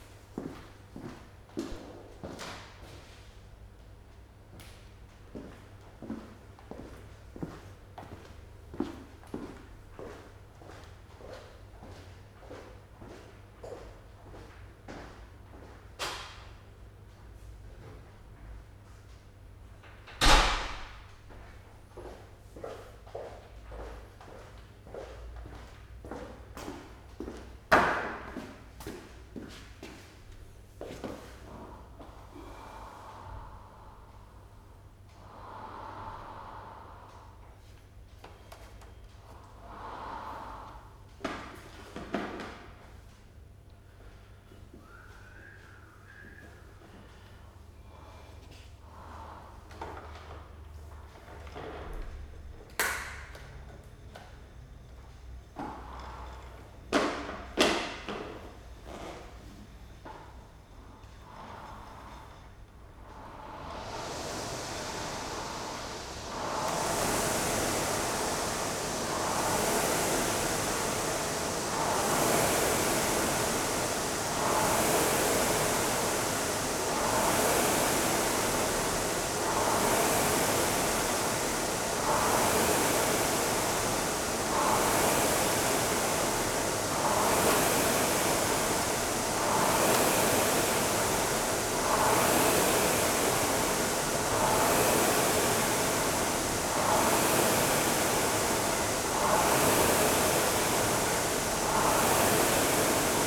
Nürtingen, Deutschland - 500m
training on a rowing machine ergometer